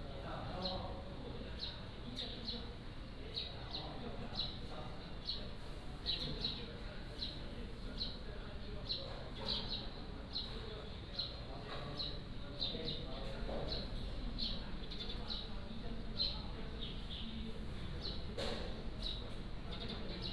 案山里, Magong City - In the temple
In the temple, Birds singing